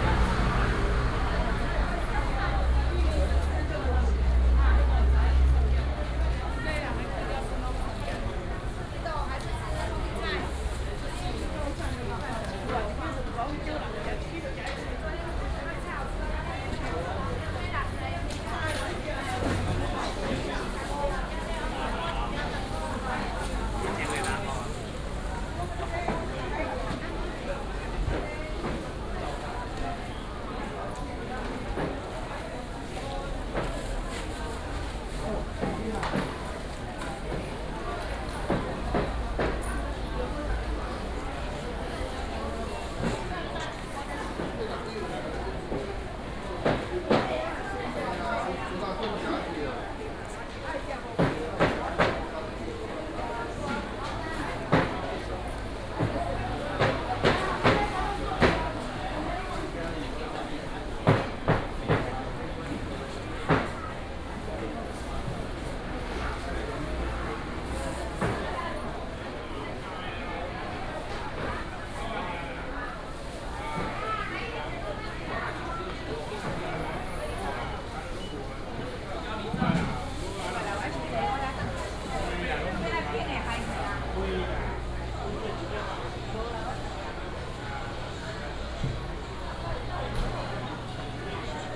新莊市公有零售市場, Xinzhuang Dist., New Taipei City - Walking in the traditional market
Walking in the traditional market